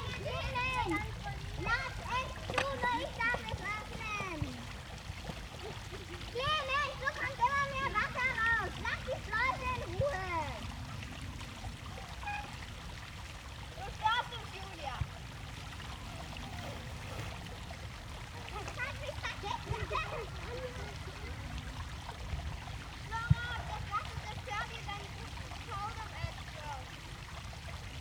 Weikerlseestraße, Linz, Austria - Children direct and play with water flows. Its intense
This hillside has been constructed to channel water downwards from a pumped source at the top. The channels can be blocked by small sluice gates that dam the water behind them. Children get really serious about controlling the water flow, lifting the gates to send it in different directions, waiting for enough to build up before releasing to the next level and planning moves into the future. Arguments over what to do and who is to do it, get pretty heated. So adult.
Oberösterreich, Österreich, September 2020